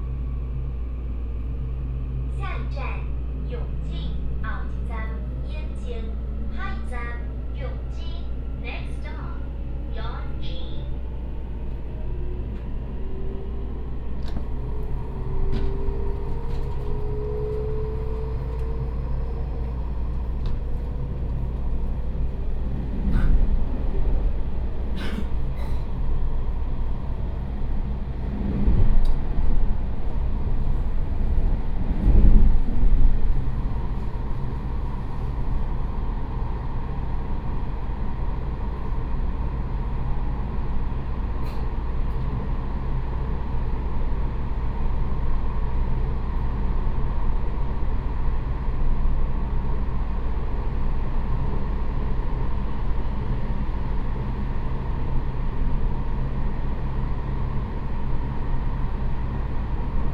Yuanlin City, Changhua County - In a railway carriage
In a railway carriage, from Yuanlin Station to Yongjing Station
Changhua County, Taiwan, 12 May 2016